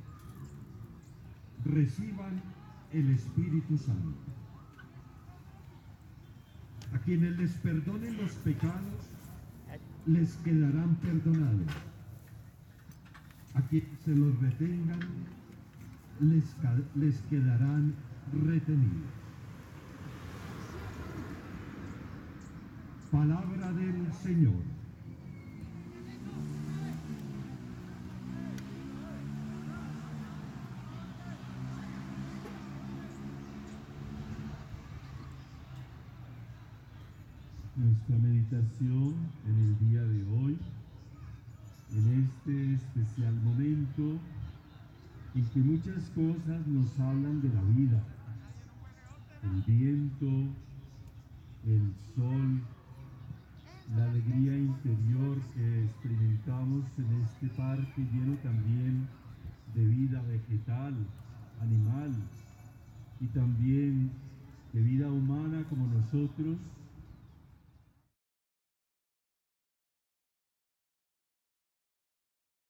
Tv., Bogotá, Colombia - ARANJUEZ VILLAS PARK. SUNDAY 11:00PM .
Aranjuez villas park. Sunday 11:00 am. religious mass in the open air, the father is praying, there is a small occasional delay and with a slightly dark feeling, there are cars and motorcycles passing by, there are people playing soccer you can hear the ball bounce and people who play screaming, an ice cream cart ringing its bell Occasionally, dogs barking, birds sing in the background, the voices of the people passing by can be heard.